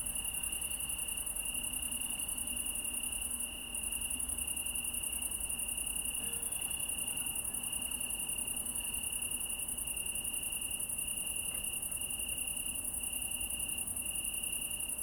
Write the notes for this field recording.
Italian tree cricket is present in most of Europe, especially in the countries around the Mediterranean. The northern boundary runs through northern France, Belgium, southern Germany, the Czech Republic and southern Poland. Adults can be encountered from July through October. These crickets are mainly nocturnal. The males rub their wings together to produce a subtle but constant. They sing from about five o'clock until three o'clock in the morning. After mating, the female lays her eggs in plant stems, especially in grape. In June the nymphs live in the tissue and leaves of the plant. A few days after the last molt the male begins to sing. The hum is coming from the highway bellow.